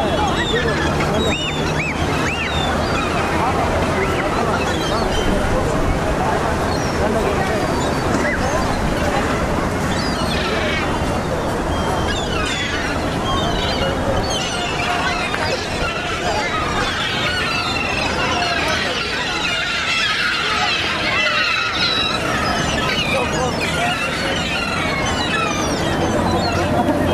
Istanbul, seagulls being fed at sunset
There is probably more people than seagulls in Istanbul. But here we have as many seagulls on one spot that uncountability is probably a good means of measure to define it as a huddle. They are being fed with chicken meat by a man obviously enjoying mastering the flying flock.
21 September, ~19:00